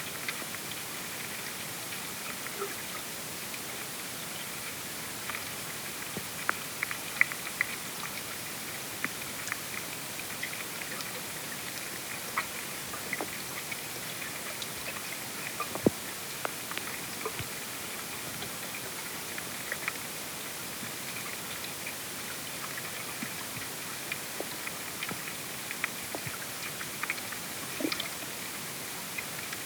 SBG, Mas Reig - Balsa
Exploración de la actividad en el fondo de la balsa de Mas Reig. Misteriosos sonidos producidos por anfibios, insectos y otros organismos.